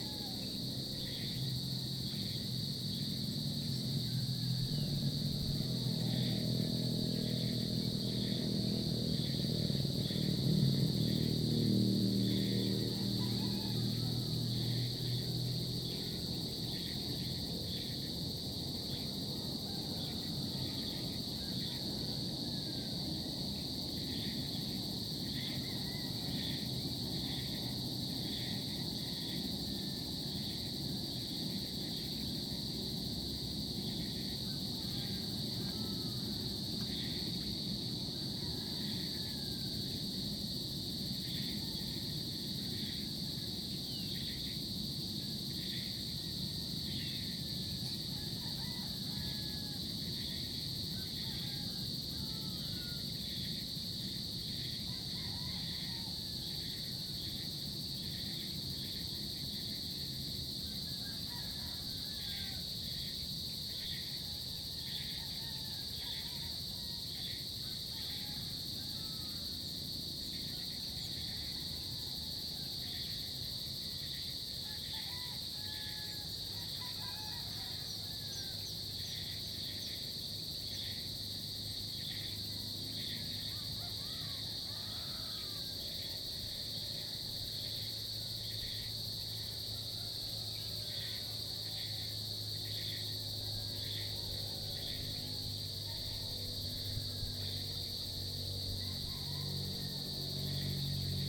{"title": "Iruhin East, Tagaytay, Cavite, Filippinerna - Tagaytay Iruhin East Valley #3", "date": "2016-07-17 06:08:00", "description": "Sounds captured after dawn by the valley along Calamba Road between Tagaytay Picnic Grove and People´s Park in the Sky. Birds, insects, lizards, roosters waking up and dogs barking. Some traffic by this hour of late night/early morning. WLD 2016", "latitude": "14.13", "longitude": "121.01", "altitude": "603", "timezone": "Asia/Manila"}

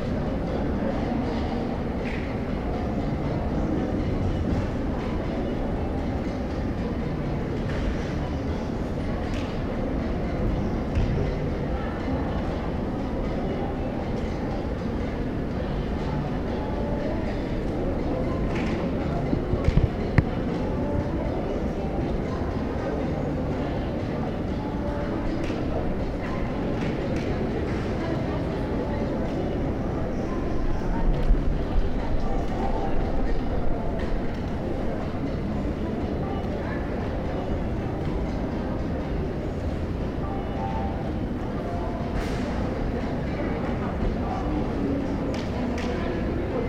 {"title": "Jasmijn, Leidschendam, Nederland - Leidschendam Shoppingmall", "date": "2020-06-08 13:17:00", "description": "A recording of the renewed Shopping Mall of The Netherlands. Country's biggest shopping mall. Google earth still shows the old mall. Recording made with a Philips Voice Tracer with medium mic settings.", "latitude": "52.09", "longitude": "4.39", "altitude": "5", "timezone": "Europe/Amsterdam"}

